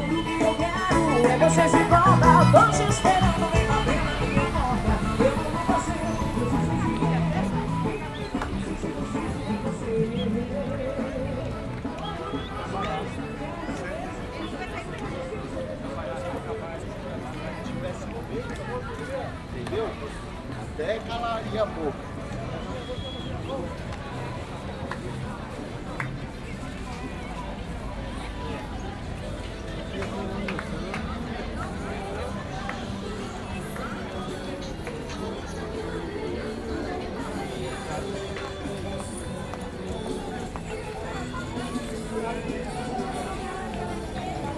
sao Paulo, Rua Gen. Cameira, shops and street vendors